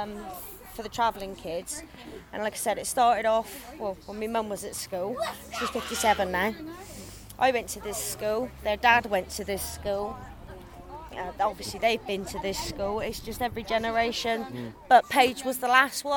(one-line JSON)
{"title": "Efford Walk One: About High View School - About High View School", "date": "2010-09-14 17:00:00", "latitude": "50.39", "longitude": "-4.10", "altitude": "72", "timezone": "Europe/London"}